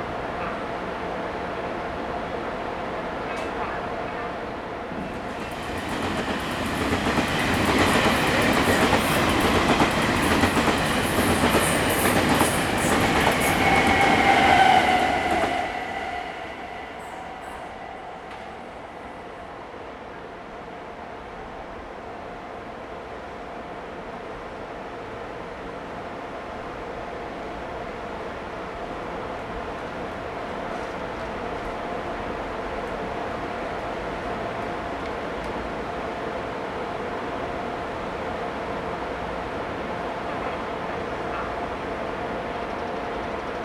Zhongzhou Station - Waiting
in the Platform, Station broadcast messages, Train traveling through, Construction noise, Sony ECM-MS907, Sony Hi-MD MZ-RH1
台南市 (Tainan City), 中華民國, 29 March 2012